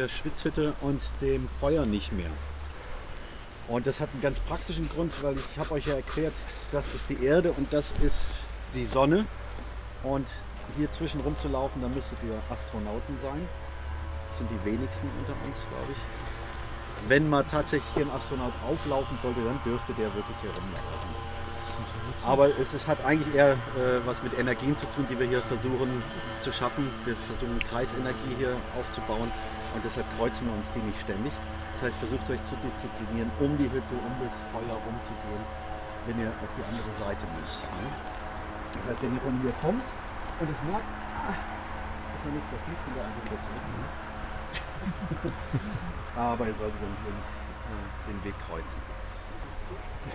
grube louise, schwitzhütte, preparation talk
shamanistic chief of a "schwitzhütten" ceremony describes parts of the procedure
soundmap nrw: social ambiences/ listen to the people - in & outdoor nearfield recordings